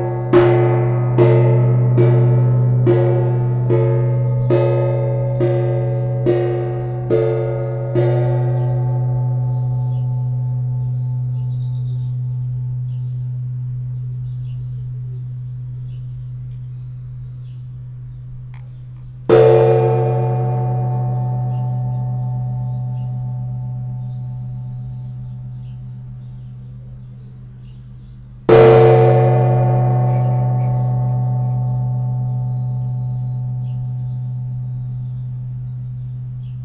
wasserorchester, wasser gong
H2Orchester des Mobilen Musik Museums - Instrument Wassergong - temporärer Standort - VW Autostadt
weitere Informationen unter